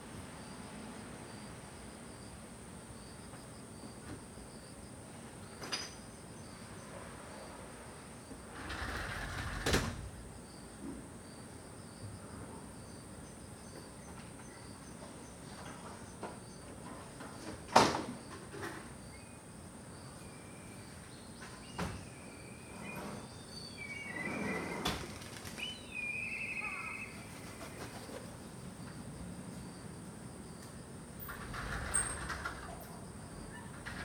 Mogimachi, Nagasaki, Nagasaki Prefecture, Japon - Chime
Few hours after Chaba typhoon in Mogi.